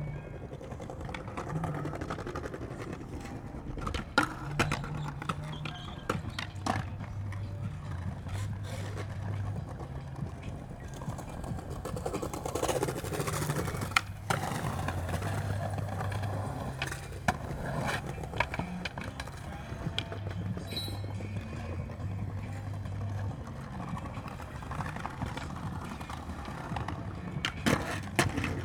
Tempelhofer Park, Berlin, Deutschland - skater area
Tempelhof, skaters and freestyle cyclists practising
(Sony PCM D50, DPA4060)